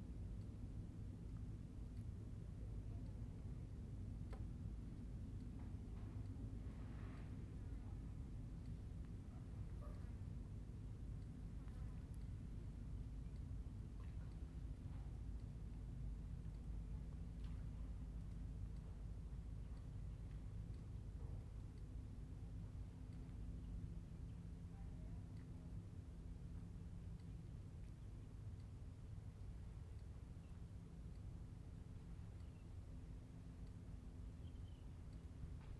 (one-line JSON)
{"title": "白坑村, Huxi Township - Small fishing port", "date": "2014-10-21 16:12:00", "description": "Small fishing port, Aircraft flying through\nZoom H2n MS+XY", "latitude": "23.59", "longitude": "119.66", "altitude": "5", "timezone": "Asia/Taipei"}